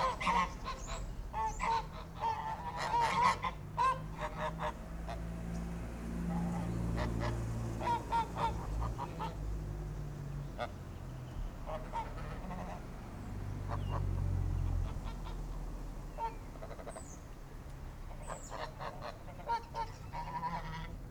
Norton, Malton, UK - Xmas geese ...
A gaggle of domestic geese in a backgarden ... how many days before they are gone ..? LS 11 integral mics ...
12 December 2016, ~10:00